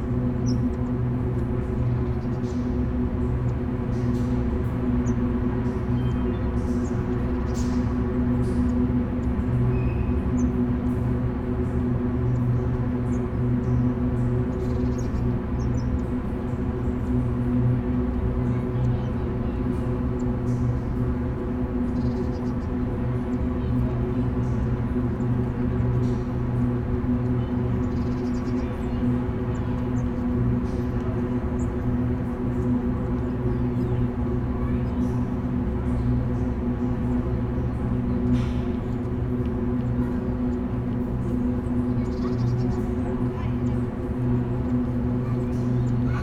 vent of an underground tank for the park water fountain